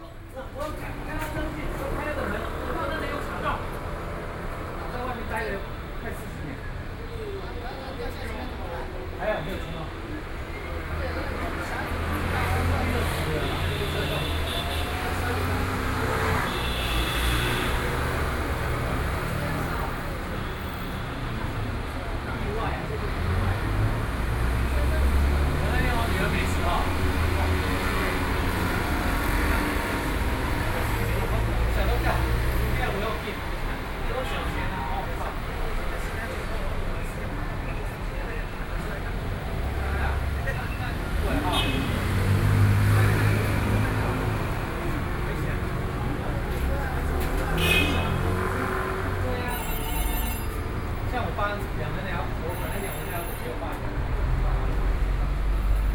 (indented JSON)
{
  "title": "Taipei city, Taiwan - Small shops",
  "date": "2012-11-12 13:47:00",
  "latitude": "25.03",
  "longitude": "121.55",
  "altitude": "19",
  "timezone": "Asia/Taipei"
}